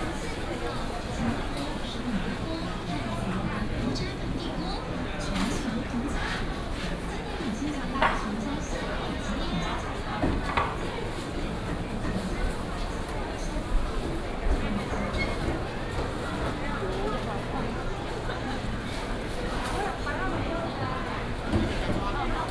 大隆路黃昏市場, Nantun Dist., Taichung City - Walking in the traditional market
walking in the Evening market, Traffic sound
Taichung City, Taiwan, 29 April 2017